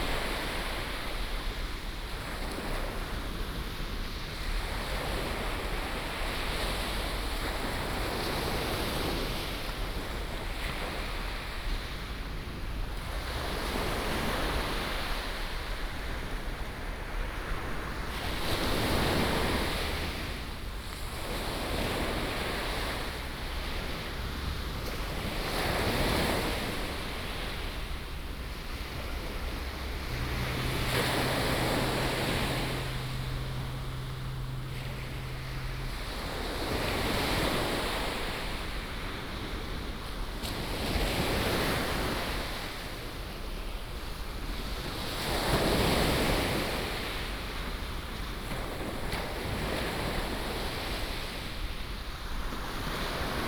Tamsui District, New Taipei City, Taiwan - Sound of the waves
On the beach, Sound of the waves
5 January 2017